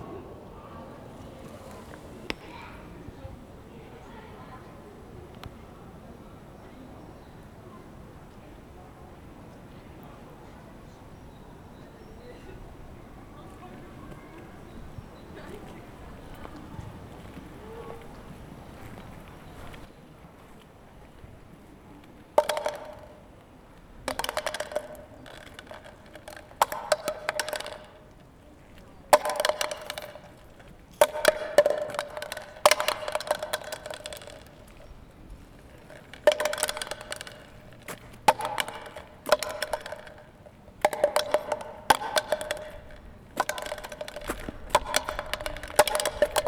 Schloßbezirk, Karlsruhe, Germany - Walz fuer ein Plastik
the empty terrasse of the Schlosscafe where one plastic cup is dancing in the wind.